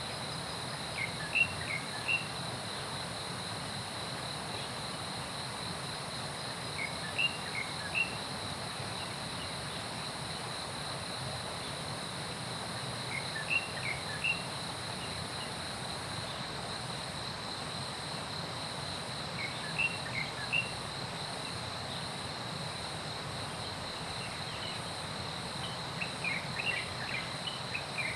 水上巷, 桃米里, Nantou County - Standing on the bridge
Early morning, Bird sounds, Insect sounds, Stream gathering place, Chicken sounds
Zoom H2n MS+XY
Puli Township, 水上巷